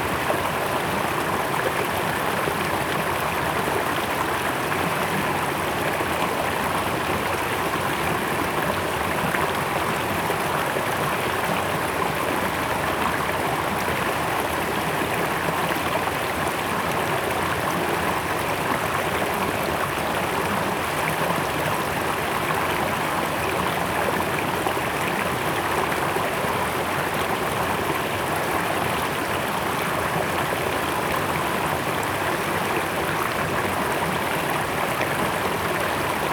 茅埔坑溪, 茅埔坑溼地公園 Puli Township - Stream sound
Stream sound
Zoom H2n MS+XY